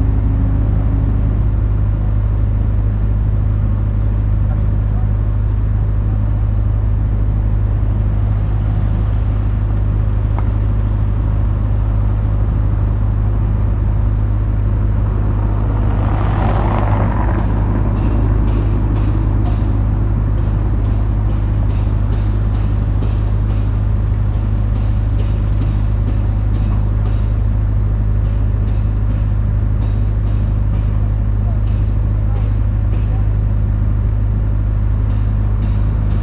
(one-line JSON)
{"title": "Berlin: Vermessungspunkt Friedel- / Pflügerstraße - Klangvermessung Kreuzkölln ::: 27.11.2013 ::: 13:22", "date": "2013-11-27 13:22:00", "latitude": "52.49", "longitude": "13.43", "altitude": "40", "timezone": "Europe/Berlin"}